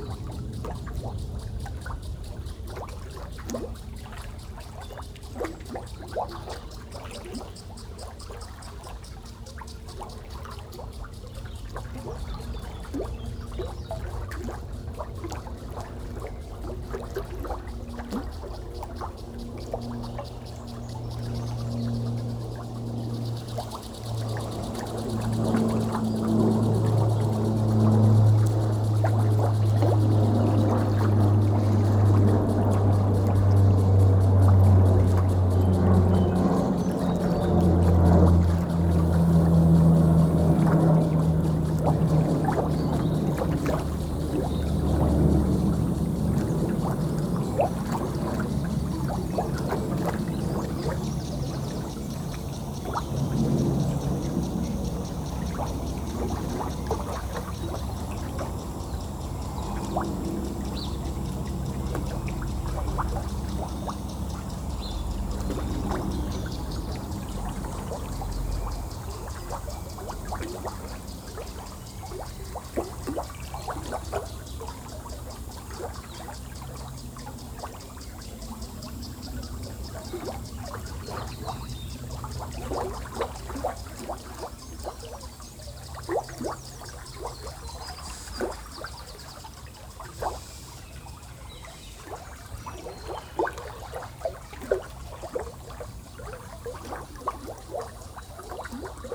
Elisabethweg, Berlin, Germany - Fast flowing river Panke, gloops, three planes and a water sprinkler
The sound of the wealthy back gardens of Pankow.
20 April 2019, 12:18